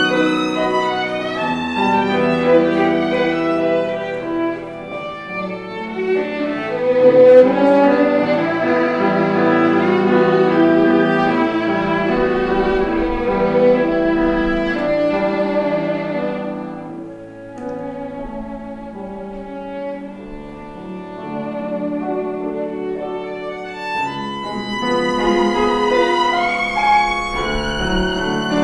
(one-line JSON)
{"title": "Alghero Sassari, Italy - An Evening at the Hotel San Francesco", "date": "2005-08-08 06:05:00", "description": "After a night out we returned to our hotel and found a classical recital taking place in the courtyard of our hotel.", "latitude": "40.56", "longitude": "8.31", "altitude": "17", "timezone": "Europe/Rome"}